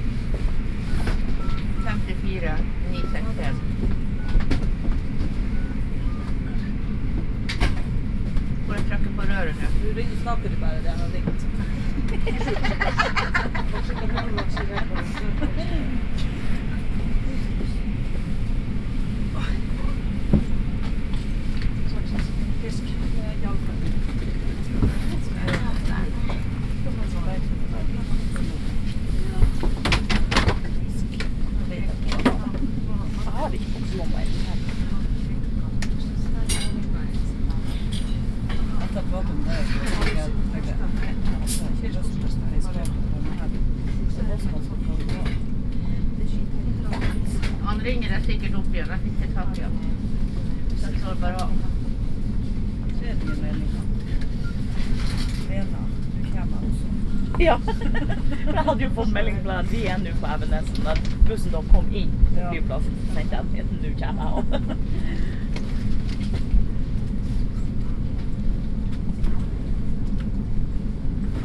Norway, Oslo, Gardermoen, airport, Flytoget, train, binaural